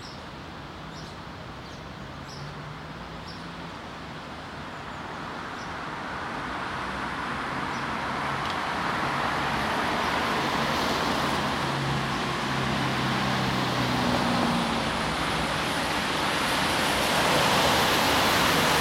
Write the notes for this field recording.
Un matin après la pluie. On entend le trafique des voitures et des transports publiques. One morning after the rain. We hear traffic in cars and public transport, Rec: Zoom h2n - processed